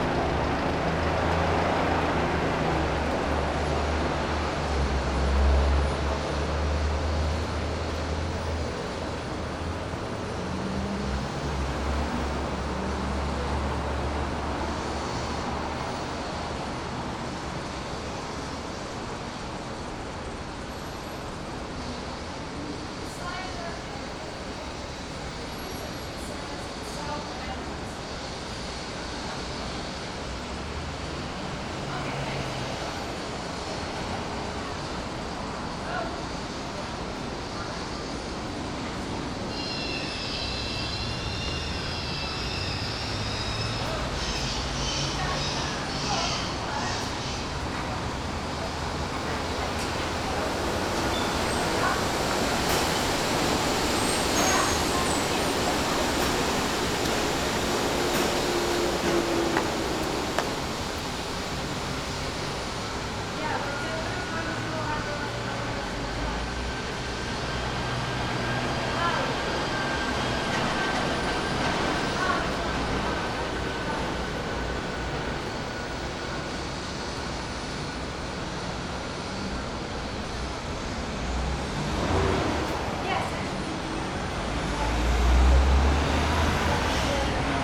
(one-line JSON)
{"title": "Helicopter vs. Frank Gehry, Seattle, WA, USA - Helicopter/EMP Museum", "date": "2013-08-12 15:37:00", "description": "Recorded within the semi-cavernous entrance to the EMP Museum. Neat things happening as the sound of a passing helicopter filled the weird space.\nSony PCM-D50", "latitude": "47.62", "longitude": "-122.35", "altitude": "43", "timezone": "America/Los_Angeles"}